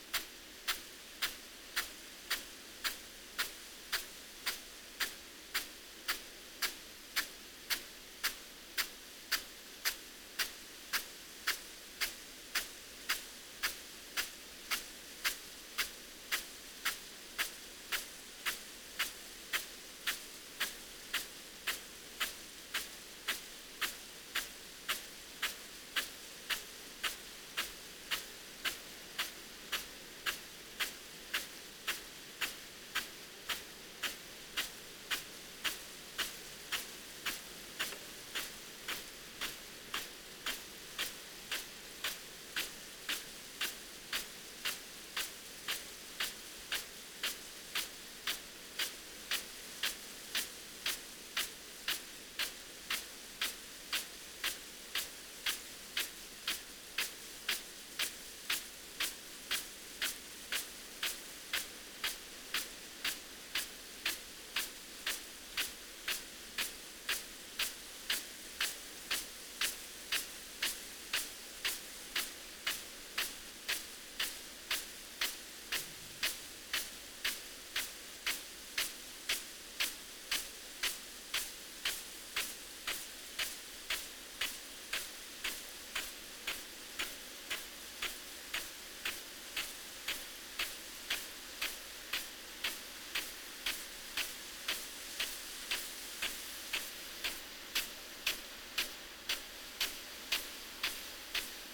field irrigation system ... parabolic ... Bauer SR 140 ultra sprinkler ... to Bauer Rainstar E irrigation unit ... standing next to the sprinkler ... bless ...
Yorkshire and the Humber, England, United Kingdom